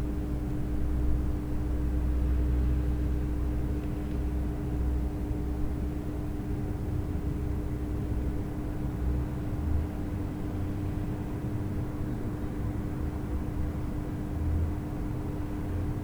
Uniwersytecki Szpital Kliniczny im. Jana Mikulicza-Radeckiego we Wrocławiu
12 April 2020, 15:10